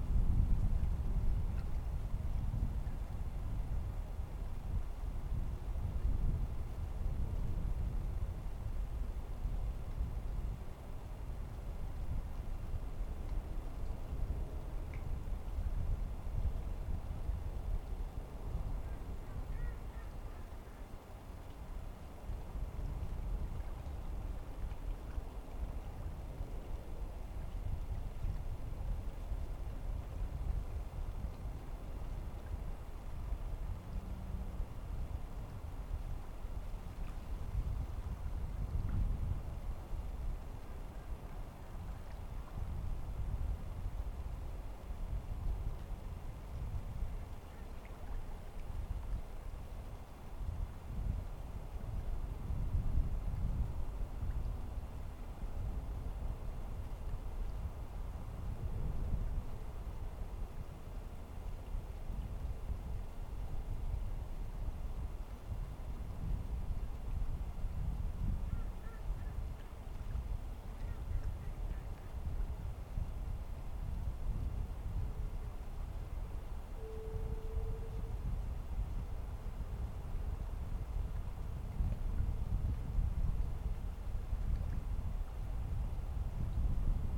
Three Pines Rd., Bear Lake, MI, USA - November Breeze and Ducks
Breezy evening, just as wind is starting to kick up for the night. Geese very high overhead, and ducks some distance out from the north shore. As heard from the top of steps leading down to water's edge. Stereo mic (Audio-Technica, AT-822), recorded via Sony MD (MZ-NF810).
November 2015